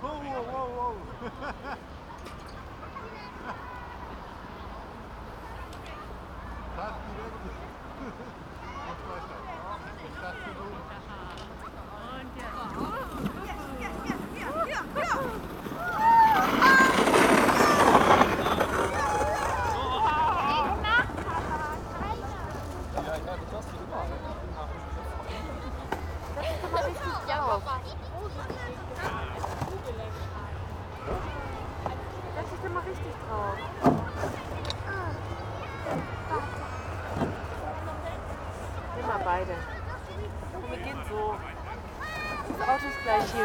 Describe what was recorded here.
winter evenig, snow, children and parents sledging in the dark